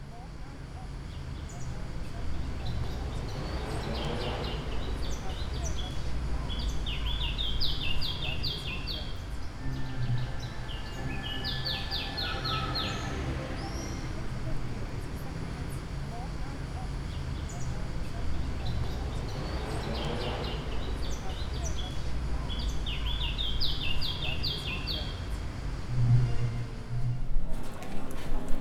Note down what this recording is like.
Sound postcard of the streets of Palermo on the 23rd of June. This track is a composition of different recordings made on this day in the garden of the Palazzo dei Normanni, in San Giovanni degli Eremiti, in the cathedral of Palermo and in the streets of the historical center. Recorded on a Zoom H4N.